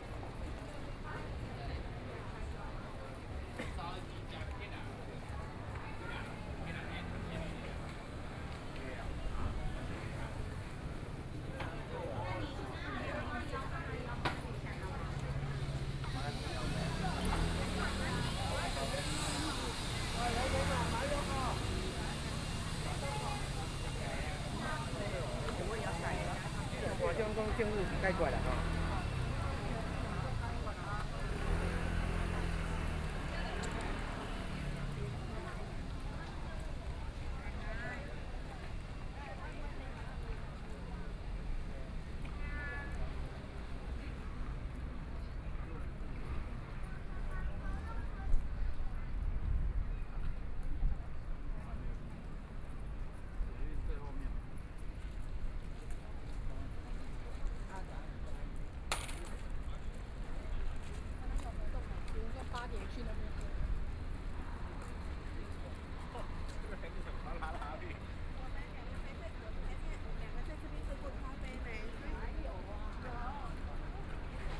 Gongming St., New Taipei City - walking in the Street
Walking through the mall during holidays, Tourists from all over, Binaural recordings, Zoom H6+ Soundman OKM II